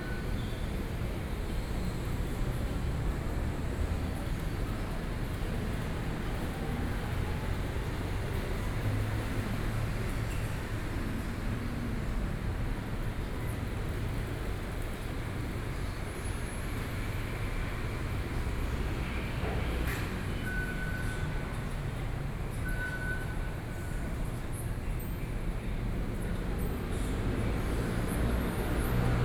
Qiyan Station, Taipei City - MRT station
MRT station, On the platform waiting, (Sound and Taiwan -Taiwan SoundMap project/SoundMap20121129-13), Binaural recordings, Sony PCM D50 + Soundman OKM II